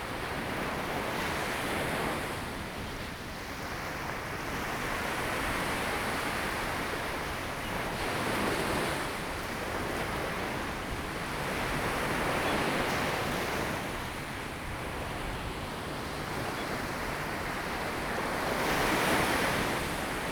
六塊厝, Tamsui Dist., New Taipei City - at the seaside
Sound of the waves
Zoom H2n MS+XY
New Taipei City, Tamsui District, 16 April 2016, ~07:00